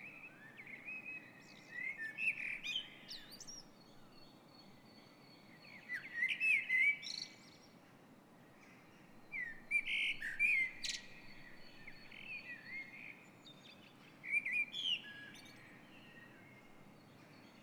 06:00 AM Dawn Chorus. With Jackdaws, Seagulls and a pigeon added to the usual bunch (Blackbird, Robin, Wren etc.).
Zoom H2 internal mics.